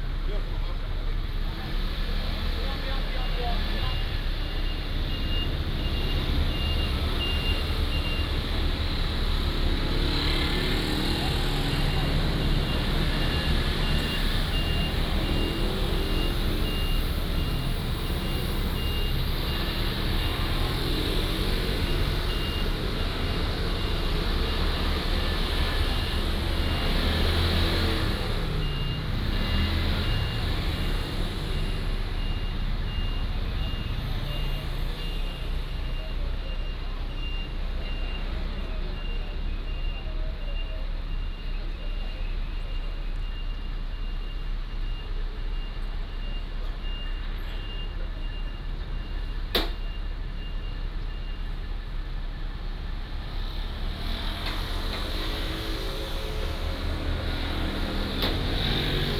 西門圓環, Tainan City - Traffic violation
Traffic violation, Traffic sound, Driving between the police and the dispute, Bird call
18 February 2017, 10:36